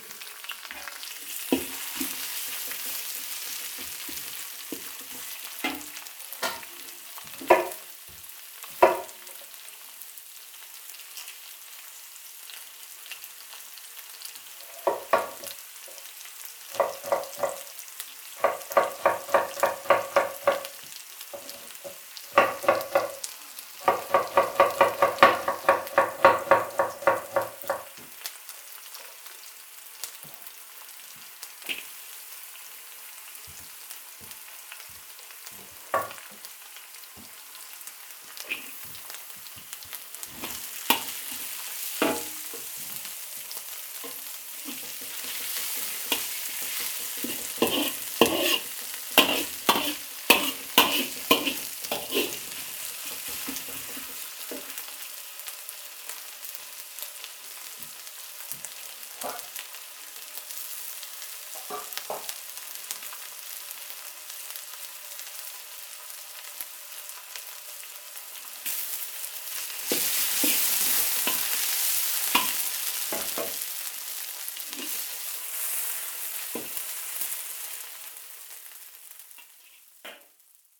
Rodenkirchen, Köln, Deutschland - cologne, rodenkirchen, simple, cooking
Inside the simple company kitchen. The sound of a gas stove ignition followed by the sound of hot oil and mashed vegetable ingreedients.
soundmap nrw - social ambiences and topographic field recordings
Cologne, Germany